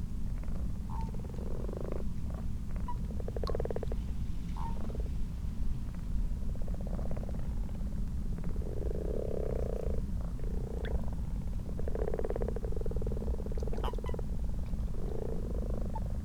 Malton, UK - frogs and toads ...
common frogs and common toads in a garden pond ... xlr sass on tripod to zoom h5 ... time edited unattended extended recording ... central heating clicking on and off ... bird call ... redwing ...
England, United Kingdom